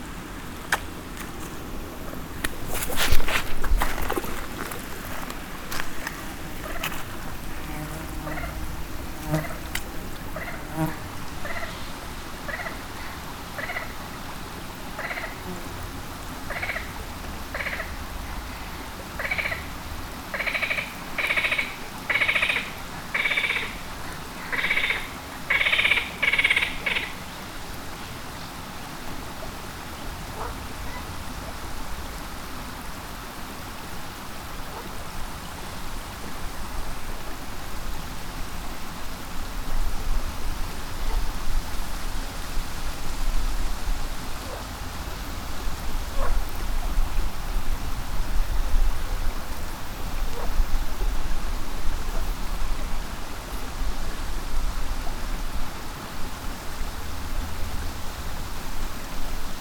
the river has hardly any water in summer. You can hear a distant waterfall, flies and one (or several?) frogs. recorded with Roland R-05